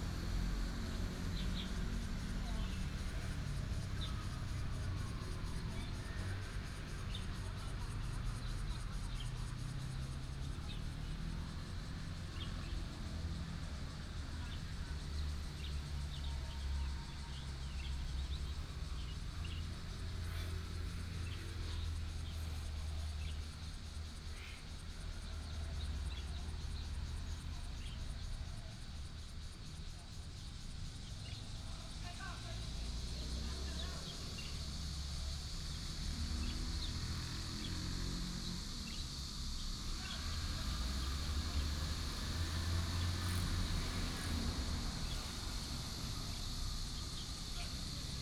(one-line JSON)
{"title": "瑞發公園, Bade Dist., Taoyuan City - Hot weather", "date": "2017-08-13 11:11:00", "description": "traffic sound, in the Park, sound of birds, Cicada cry", "latitude": "24.93", "longitude": "121.30", "altitude": "148", "timezone": "Asia/Taipei"}